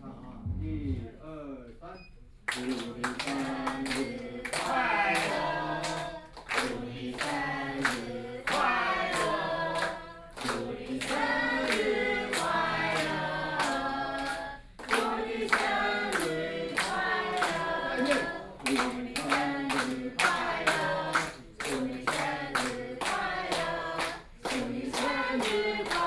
Minquan Rd., Yonghe District - In the nursing home
In the nursing home, Binaural recordings, Zoom H6+ Soundman OKM II
New Taipei City, Taiwan, 24 December 2013, ~10:00